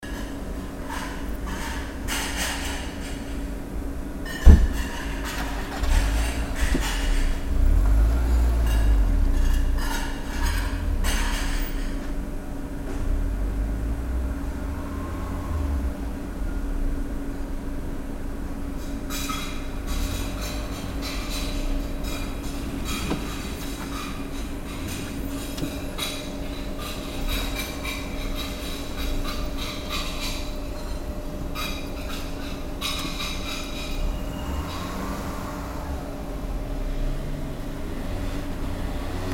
cologne, mainzerstr, altenpflegeheim, küche - dish-washing room
open window of the home of the aged.
recorded june 4, 2008 - project: "hasenbrot - a private sound diary"